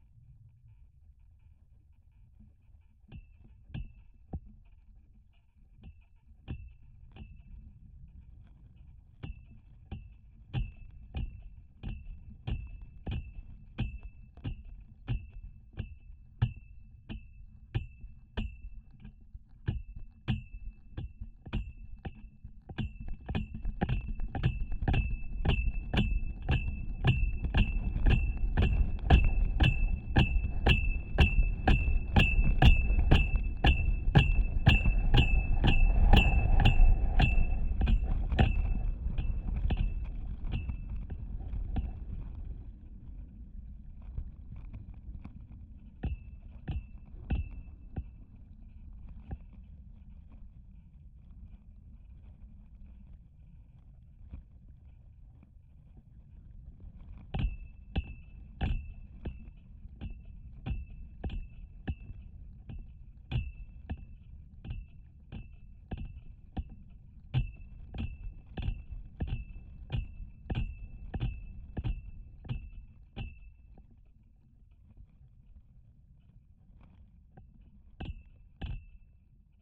{"title": "Duburys Island, Lithuania, flagstick", "date": "2016-06-05 12:20:00", "description": "contact microphones on the flagstick", "latitude": "55.79", "longitude": "25.96", "altitude": "121", "timezone": "Europe/Vilnius"}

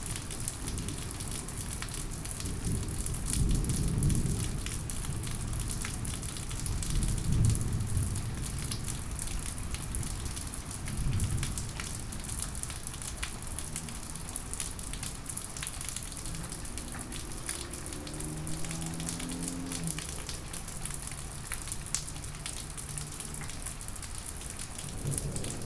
{"title": "Eastside, Milwaukee, WI, USA - thunderstorm, WLD 2015", "date": "2015-07-18 15:45:00", "latitude": "43.06", "longitude": "-87.88", "altitude": "204", "timezone": "America/Chicago"}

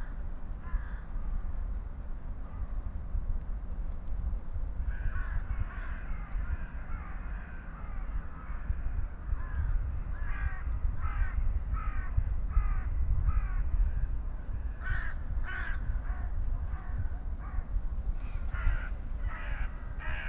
{"title": "tree crown poems, Piramida - crows on their regular path", "date": "2013-01-21 16:21:00", "description": "crows, spoken words, wind", "latitude": "46.57", "longitude": "15.65", "altitude": "373", "timezone": "Europe/Ljubljana"}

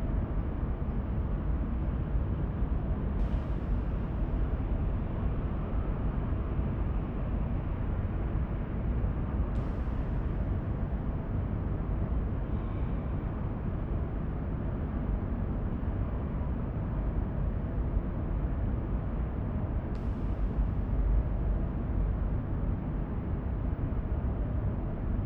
{"title": "Wersten, Düsseldorf, Deutschland - Düsseldorf. Provinzial building, conference room", "date": "2012-12-11 12:30:00", "description": "Inside the building of the insurance company Provinzial in a conference room entitled \"Room Düsseldorf\". The sonorous, constant sound of the room ventilation and some mysterious accents in the empty room.\nThis recording is part of the exhibition project - sonic states\nsoundmap nrw -topographic field recordings, social ambiences and art places", "latitude": "51.20", "longitude": "6.81", "altitude": "44", "timezone": "Europe/Berlin"}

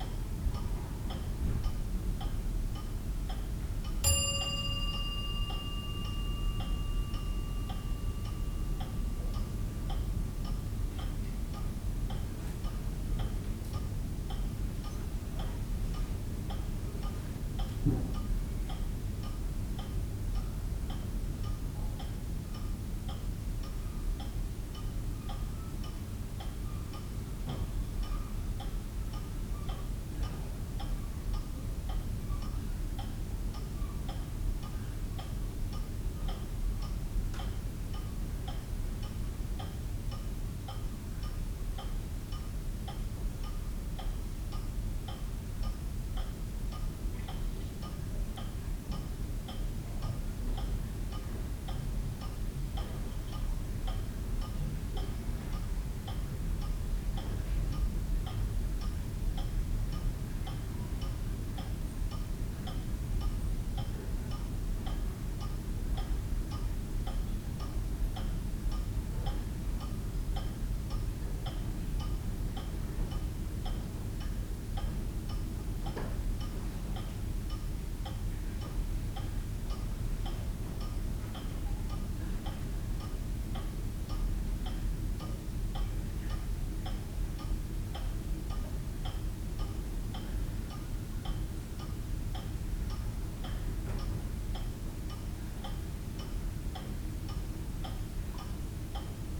{"title": "Whitby, UK - Inside St Marys Church", "date": "2016-02-10 09:50:00", "description": "Having recorded the church clock chiming from the outside ... what did it sound like from the inside ..? wall clock ticking and chiming ... church clock chiming ... voices ... the heating system knocking ... lavalier mics clipped to a sandwich box lid ...", "latitude": "54.49", "longitude": "-0.61", "altitude": "39", "timezone": "Europe/London"}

